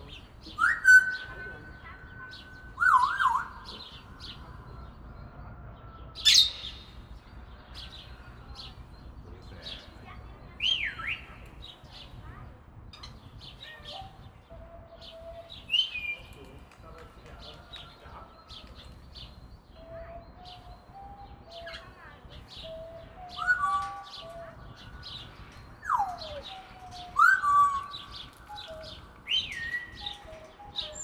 {
  "title": "Grugapark, Virchowstr. 167 a, Essen, Deutschland - essen, gruga park, parrot aviary",
  "date": "2014-04-09 14:30:00",
  "description": "Inside the Gruga Park at the birdcages. The sounds of parrots in their aviary plus the voice and bird sound imitation of a visitor.\nIm Gruga Park an den Vogelkäfigen. Der Klang von Papageienstimmen in ihren Volieren und die Stimme eines Besuchers der Vogelstimmen nachahmt.\nProjekt - Stadtklang//: Hörorte - topographic field recordings and social ambiences",
  "latitude": "51.43",
  "longitude": "6.99",
  "altitude": "109",
  "timezone": "Europe/Berlin"
}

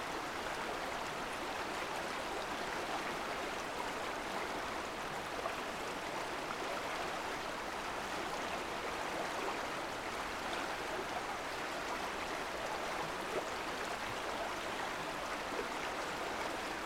{
  "title": "Thunder Creek Bridge - Water Flowing Under Thunder Creek",
  "date": "2018-10-23 17:00:00",
  "description": "Thunder Creek flows into Diablo Lake, a man made lake in the North Cascades National Park.\nAt the time of the recording this section of the park was nearly empty of human visitors, the cool and damp conditions had resulted in considerable blooms of hundreds of species of mushrooms.",
  "latitude": "48.67",
  "longitude": "-121.07",
  "altitude": "388",
  "timezone": "America/Los_Angeles"
}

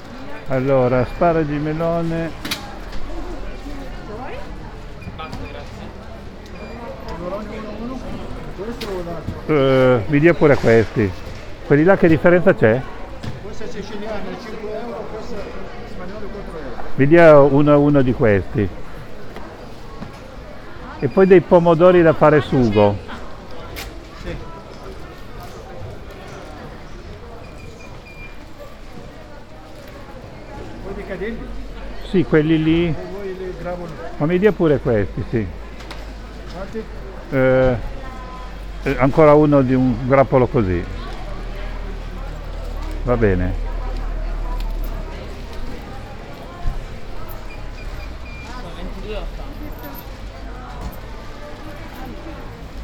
{
  "title": "Ascolto il tuo cuore, città. I listen to your heart, city. Several chapters **SCROLL DOWN FOR ALL RECORDINGS** - “Outdoor market in the square at the time of covid19” Soundwalk",
  "date": "2020-04-23 11:27:00",
  "description": "“Outdoor market in the square at the time of covid19” Soundwalk\nChapter LIV of Ascolto il tuo cuore, città. I listen to your heart, city.\nThursday April 23rd 2020. Shopping in the open air square market at Piazza Madama Cristina, district of San Salvario, Turin, fifty four days after emergency disposition due to the epidemic of COVID19.\nStart at 11:27 a.m., end at h. 11:59 a.m. duration of recording 22’10”\nThe entire path is associated with a synchronized GPS track recorded in the (kml, gpx, kmz) files downloadable here:",
  "latitude": "45.06",
  "longitude": "7.68",
  "altitude": "245",
  "timezone": "Europe/Rome"
}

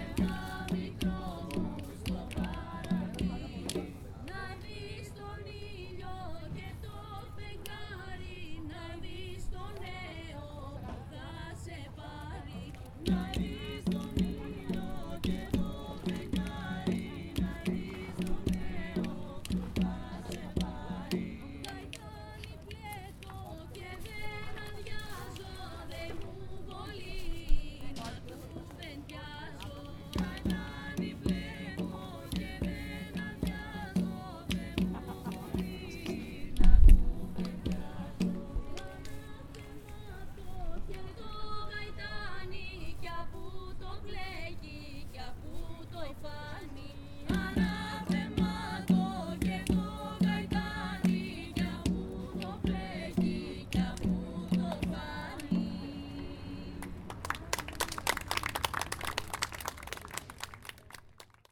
{
  "title": "Nea Paralia (Garden of Water), Thessaloniki, Greece - A group of youngsters singing a greek traditional song",
  "date": "2012-07-18 23:50:00",
  "description": "A lot of people are hanging out at this part of the city, which is near the sea, there is always a light breeze and some times they like to sing or play the guitar.\nMoreover, the 30th ISME World Conference on Music Education was taken place to the nearby Concert Hall of Thessaloniki, so I think that this group was consisted of professional musicians, participants of the conference, who were enjoying themselves.",
  "latitude": "40.60",
  "longitude": "22.95",
  "timezone": "Europe/Athens"
}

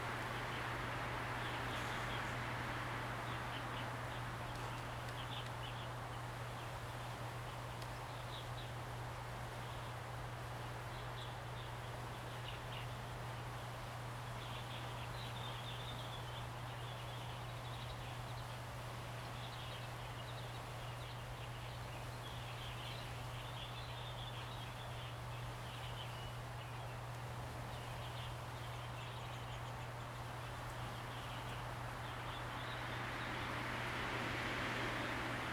{
  "title": "Kinmen County, Taiwan - Beef Wood",
  "date": "2014-11-03 07:25:00",
  "description": "Birds singing, Wind, In the woods, Beef Wood\nZoom H2n MS+XY",
  "latitude": "24.46",
  "longitude": "118.30",
  "altitude": "11",
  "timezone": "Asia/Taipei"
}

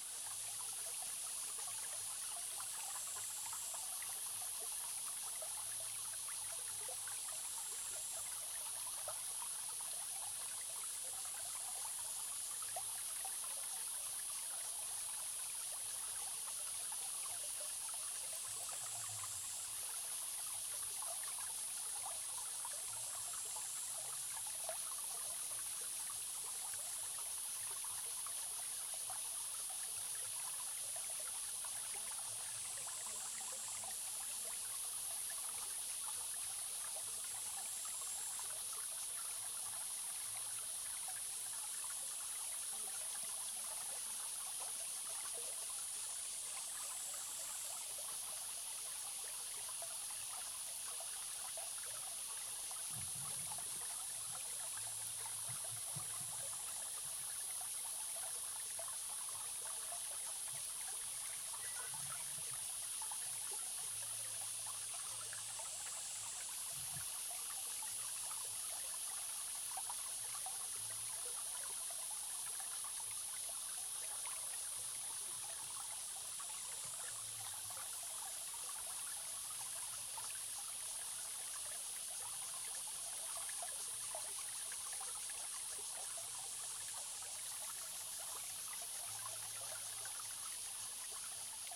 {
  "title": "種瓜坑溪, 成功里 - Stream",
  "date": "2016-07-14 09:49:00",
  "description": "Stream, Cicadas sound\nZoom H2n Spatial audio",
  "latitude": "23.96",
  "longitude": "120.89",
  "altitude": "454",
  "timezone": "Asia/Taipei"
}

